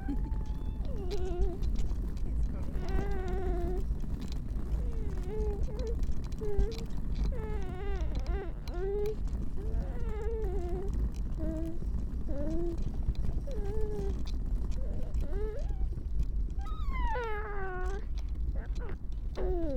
Chichester, West Sussex, UK - Barnaby's tiny 16 week old sounds
This is one of my nephews, Barnaby. He is about 16 weeks old and is starting to make all sorts of sounds. I love these little growls and utterances, a bit grizzly and then happy again... I love listening as he gets to grips with having a voice and exploring his ability to make noises with it. Sometimes he startles himself with his own sounds so I don't know how well he understands that he himself is making these noises! In this recording I am walking with Mel - Barnaby's mother and my sister-in-law - and we are chatting about his sounds. The recorder is in the pram and Barnaby is chatting into it. You can hear the rumble of the pram, the distant traffic, and us chatting about when my train home will be there. We pass into a tunnel at some point which makes Barnaby's sounds especially sonorous.
5 February 2015, 15:35